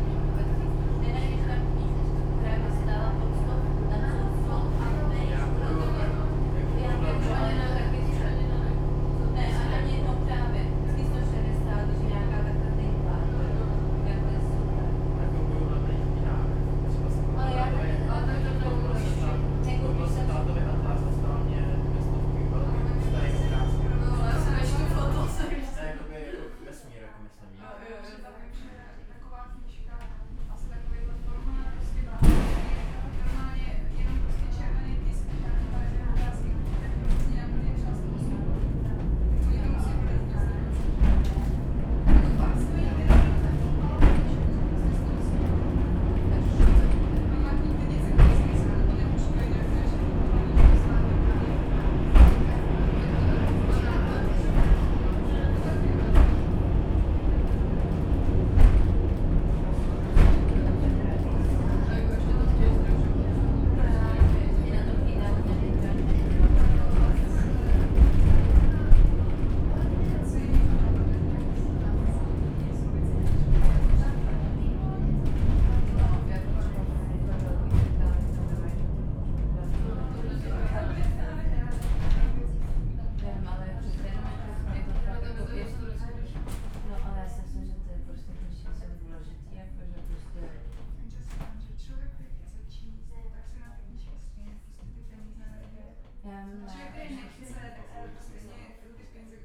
Praha, Petřín funicular
compete ride downwards
22 June 2011, 11:40